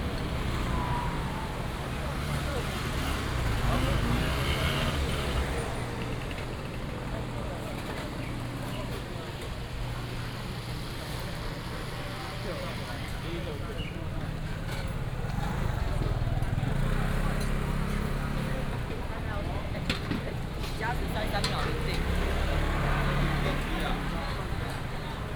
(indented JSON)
{
  "title": "南機場夜市, Wanhua Dist. - Walking in the night market",
  "date": "2017-04-28 16:58:00",
  "description": "Walking in the night market, traffic sound",
  "latitude": "25.03",
  "longitude": "121.51",
  "altitude": "12",
  "timezone": "Asia/Taipei"
}